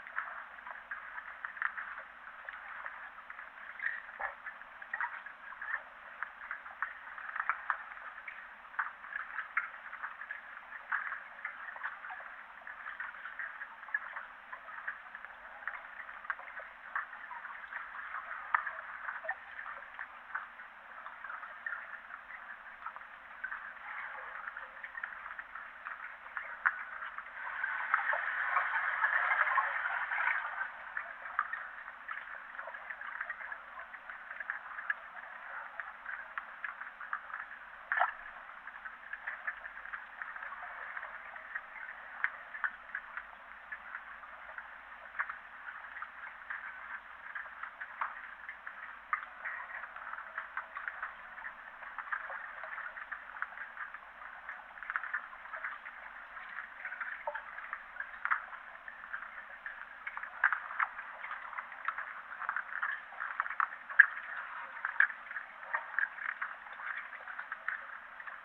Utena, Lithuania, evening underwater
quiet autumn evening. hydrophone
Utenos apskritis, Lietuva, 24 September 2019